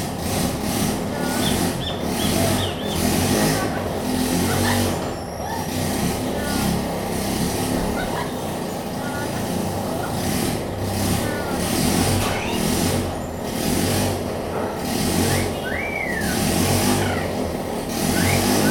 Cl., Mompós, Bolívar, Colombia - La lora del taller
El taller del maestro artesano y orfebre Eligio Rojas. Tiene dos perros y una lora.